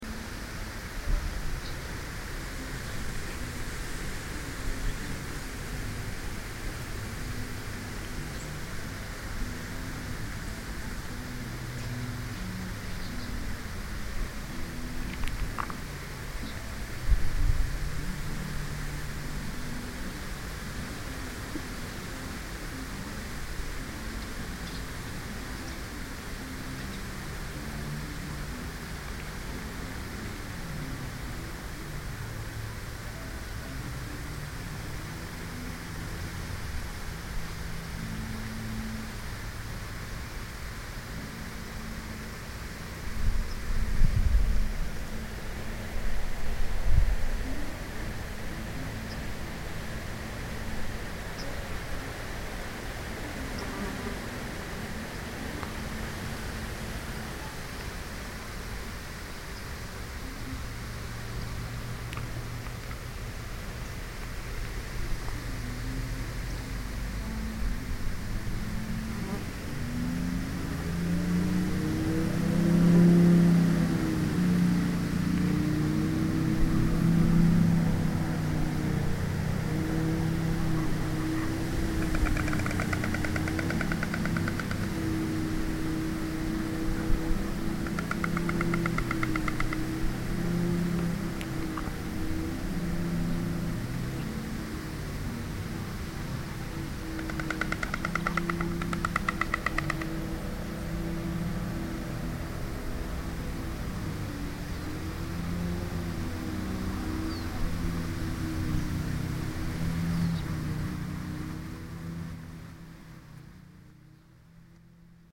Haki village - (binaural) car radio, grass trimmer and white stork
morning soundscape near a South-Estonian country house (binaural)